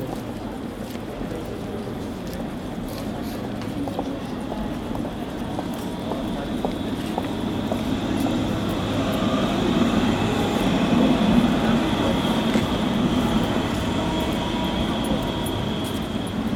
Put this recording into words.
Die Herrengasse liegt Mitten im Zentrum von Graz und ist die größte Einkaufsstraße. Die Aufnahme wurde exakt in der Mitte der Herrengasse mit einem H2n zoom gemacht.